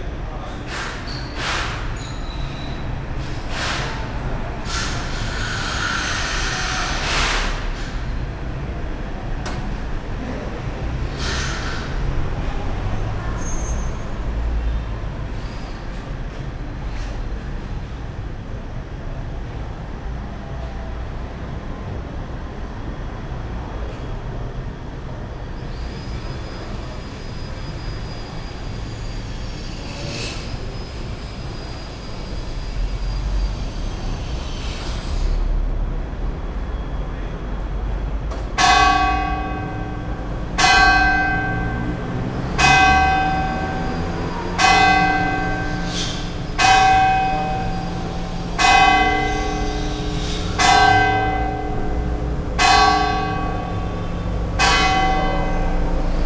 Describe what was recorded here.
Trapani, Corso Vittorio Emanuele, the bells